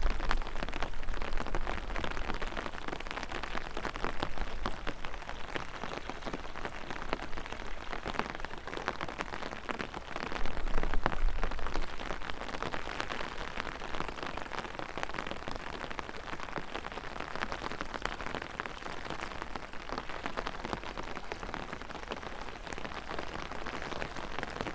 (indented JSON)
{
  "title": "river Drava, Loka - walking, excavated gravel",
  "date": "2015-02-22 13:34:00",
  "description": "rain drops, umbrella, flow of river water",
  "latitude": "46.48",
  "longitude": "15.76",
  "altitude": "233",
  "timezone": "Europe/Ljubljana"
}